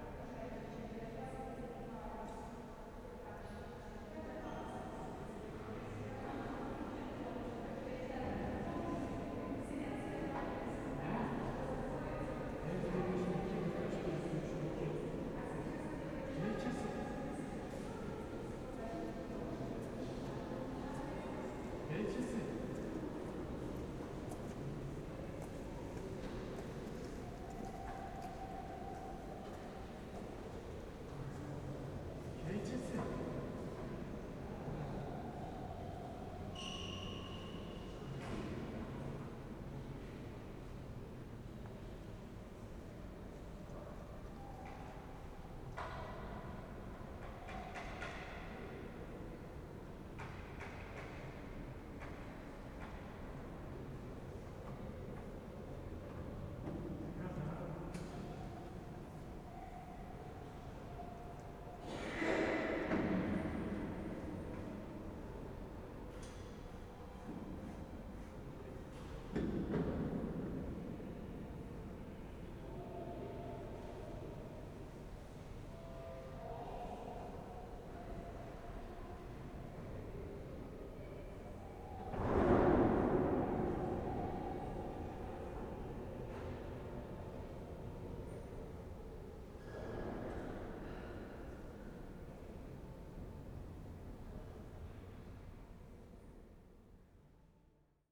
Lithuania, Vilnius, workers in cathedral
cathedral ambience and workers noises
Vilnius district municipality, Lithuania